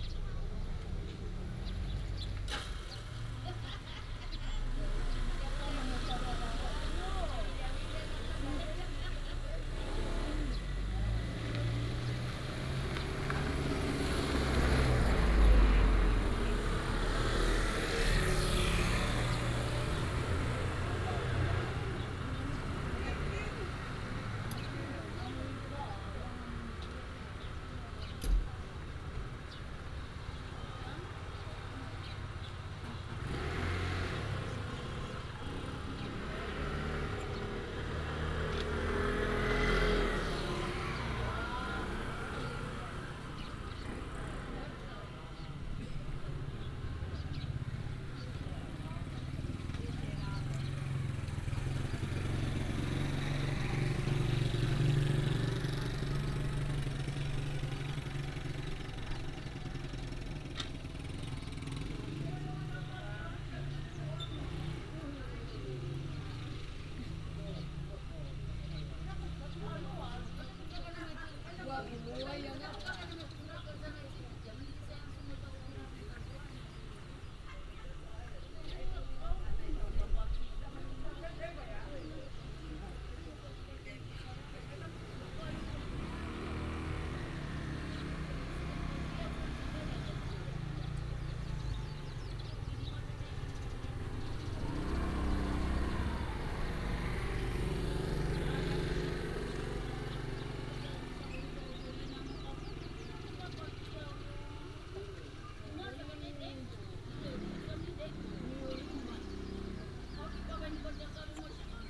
Daniao, Dawu Township, 大武鄉大鳥 - Tribal main road
Beside the road, Traffic sound, Tribal main road, Residents gather to prepare for a ride, birds sound
Binaural recordings, Sony PCM D100+ Soundman OKM II